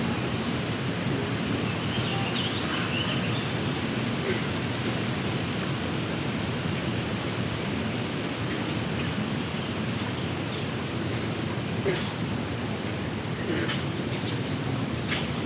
Taylor Creek Park, Toronto, ON, Canada - WLD 2020 Frogs & Dogs
Recording from the creek near a pond populated with green frogs (Rana clamitans), which much of the time are able to outdo the dogs (though to be fair, those are probably further away).